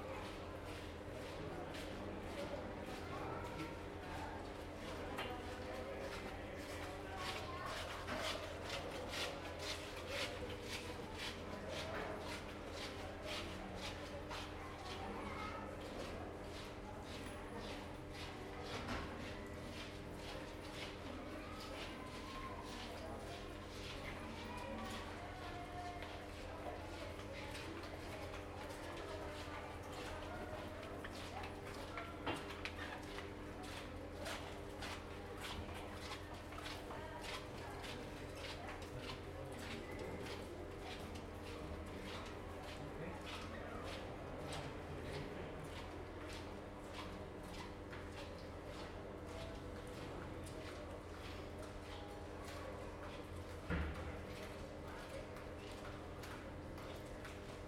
Centro Histórico, Málaga, Prowincja Malaga, Hiszpania - Squeak
A narrow, high walled street in Malaga. You can hear the locals and tourists passing by, their footsteps resonating. In the background the constant hum of air conditioners. Recorded with Zoom H2n.
Málaga, Málaga, Spain